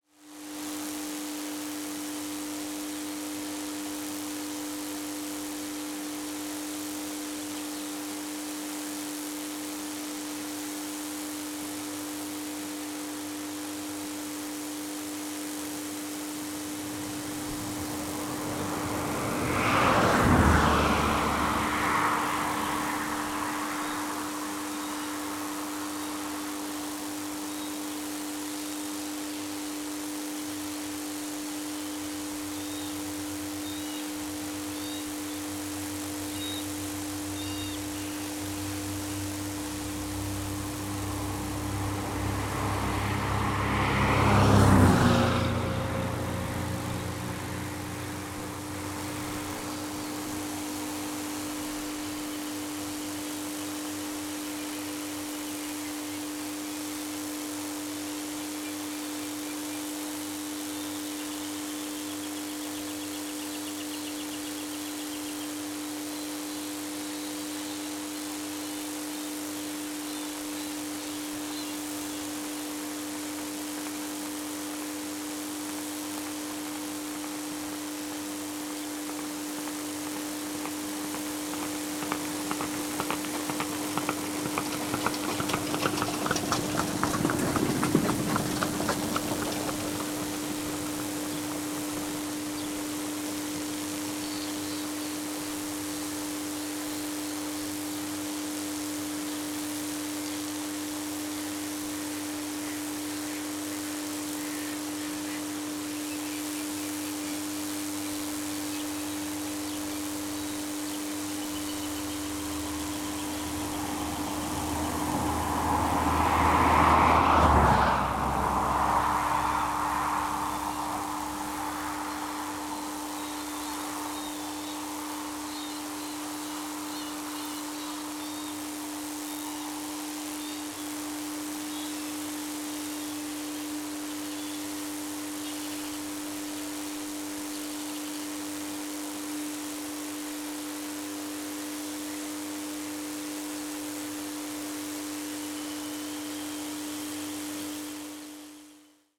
Romania - Electrical station on the side of the road
Recording electricity on the side of the road, cars pass by and a horse-drawn carriage.